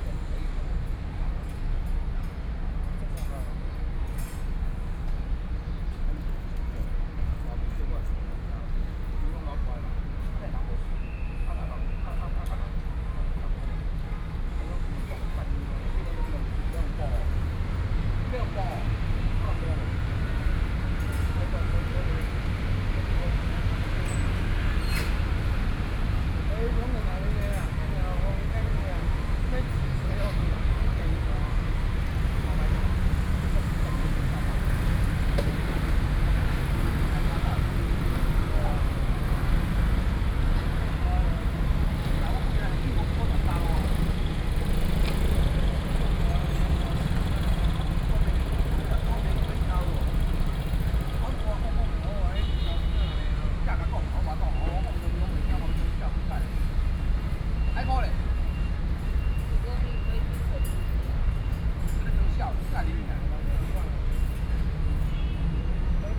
Meet, Sony PCM D50 + Soundman OKM II